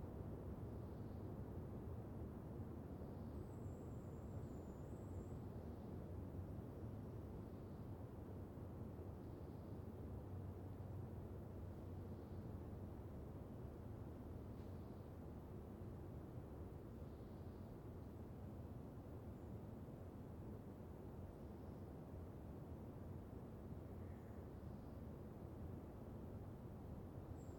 Valdivia, Chili - LCQA AMB PUNTA CURIÑANCO EL OLIVILLO MORNING BIRDS PRECISE MS MKH MATRICED
This is a recording of a forest 'el Olivillo' in the Área costera protegida Punta Curiñanco. I used Sennheiser MS microphones (MKH8050 MKH30) and a Sound Devices 633.
Provincia de Valdivia, Región de Los Ríos, Chile, 24 August 2022, 12:00